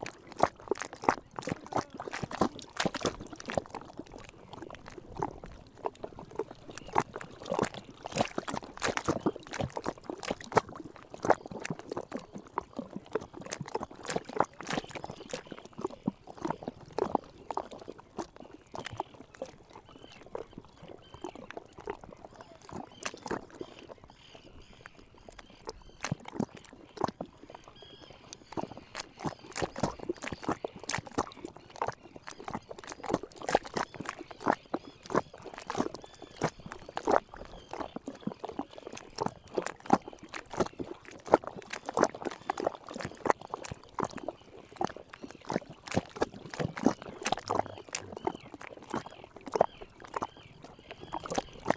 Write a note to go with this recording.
Sound of the water lapping, into the salt marshes. At the backyard, Pied Avocet and Black-headed Gulls.